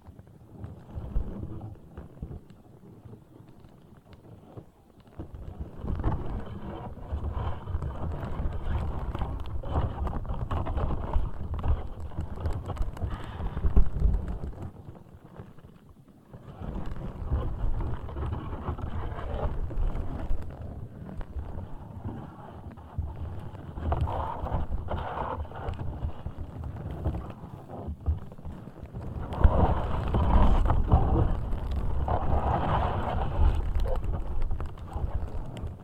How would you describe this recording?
mild wind. diy "stick" contact microphone sticked into ground right at reed roots.